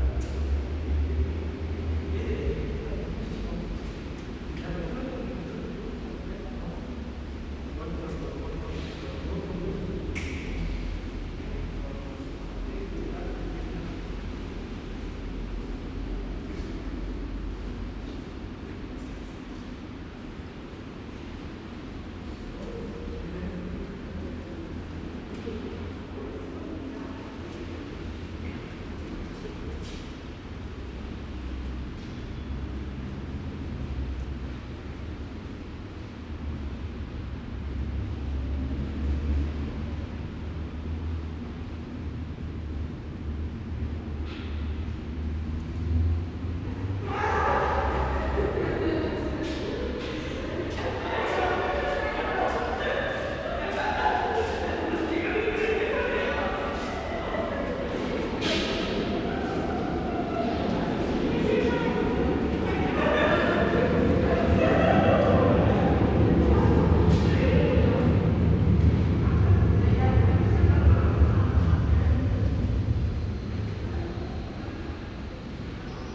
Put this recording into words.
A concrete cavern with tiled pillars that is both a subway for crossing the road and an entrance to the U-Bahn. There are not so many people for rush hour, maybe because of the Covid lockdown-light that currently rules Berlin. Suddenly two girls burst out laughing. They've been hiding from someone and jump out when he passes. I have been here often. It is one of the most inhospitable U-bahn stations in the city. But strangely compelling too.